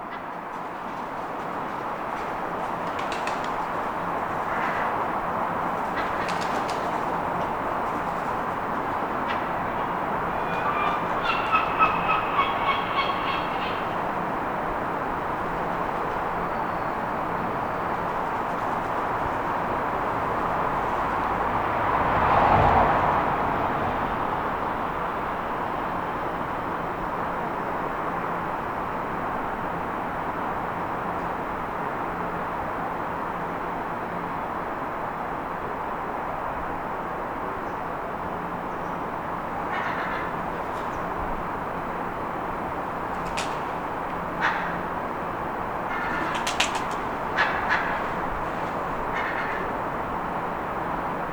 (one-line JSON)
{"date": "2020-03-27 15:25:00", "description": "A rare occasion to witness a Friday afternoon with the entire Commercial Court empty. This popular location usually gathers groups of locals and tourists to a begin their weekend festivities. Even looking into some of the bars, they still had their St. Patrick decorations up.", "latitude": "54.60", "longitude": "-5.93", "altitude": "6", "timezone": "Europe/London"}